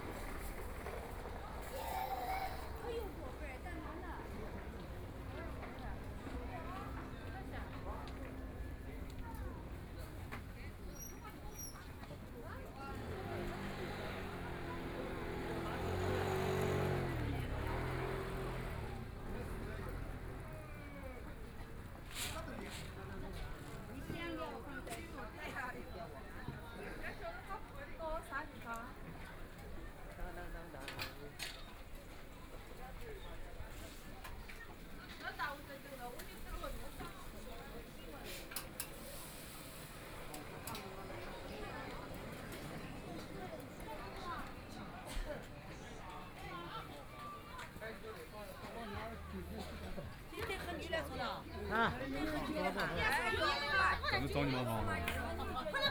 Guangqi Road, Shanghai - Walking through the night market
Walking in the street market, Binaural recording, Zoom H6+ Soundman OKM II